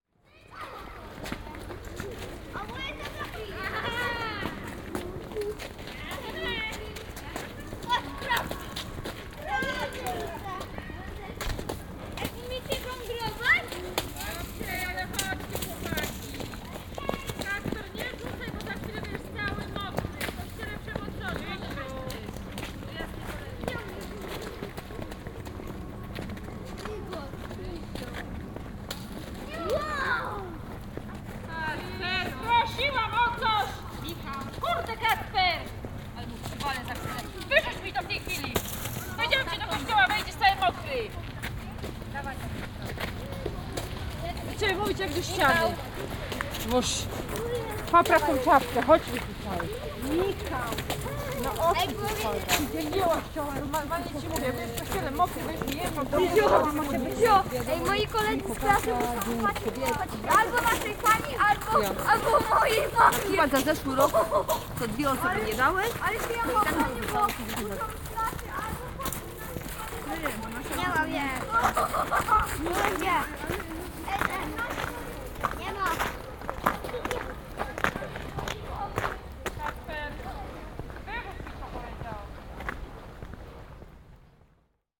Broadwalk at Czerwionka-Leszczyny, Polska - (59) BI Kids playing with snow
Binaural recording of kids playing with snow on their way to church.
Sony PCM-D100, Soundman OKM